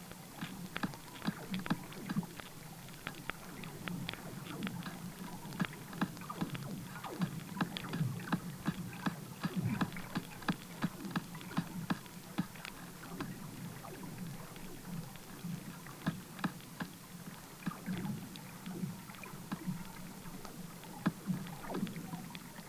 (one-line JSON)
{"title": "Pušyno g., Utena, Lithuania - Heartbeat of Nature", "date": "2018-12-15 14:02:00", "description": "Heartbeat of Nature", "latitude": "55.52", "longitude": "25.63", "altitude": "127", "timezone": "Europe/Vilnius"}